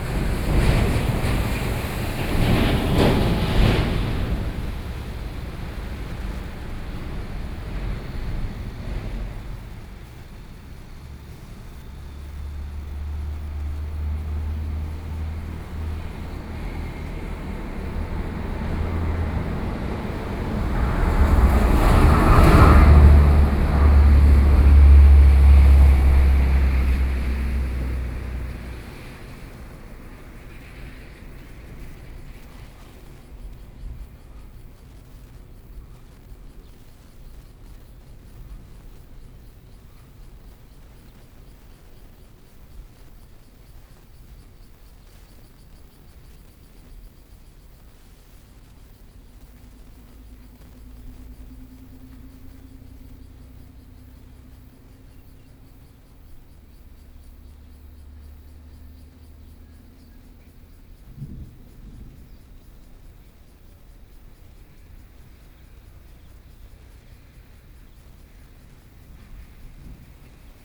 Intersection, traffic noise, Thunder, Train traveling through, Sony PCM D50+ Soundman OKM II
Yangmei City, Taoyuan County, Taiwan, 14 August 2013, 13:47